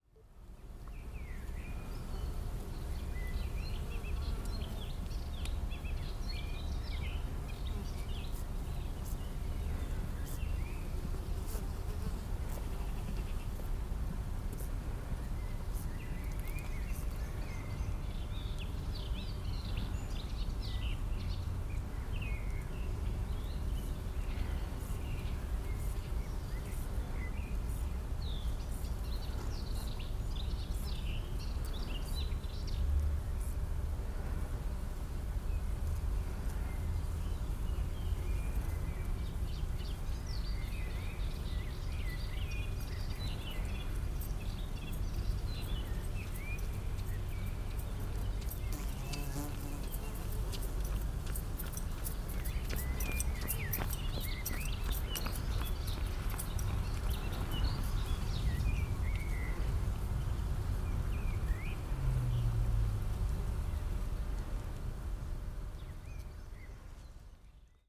Via Evangelista Torricelli, Verona VR, Italia - Bees on the old railway
Walk along the old railway tracks transformed into a pedestrian path.
Veneto, Italia, 11 June 2021, 15:13